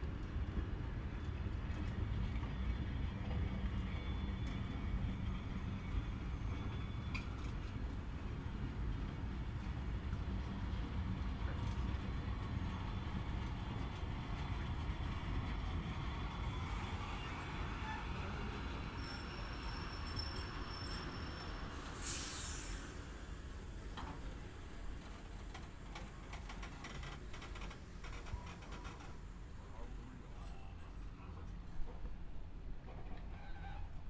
Kingston ACT, Australia - Christmas Party Train Arriving
15.12.2013 ARHS ACT ran a special Christmas party train from Canberra to Tarago and back.Here it is arriving back at Canberra station led by locomotives 4403, FL220 and 4807. The sounds of the disco carriage can be heard going past as can the generator mounted in a container as the rear of the train comes to a stand before the Zoom H4n.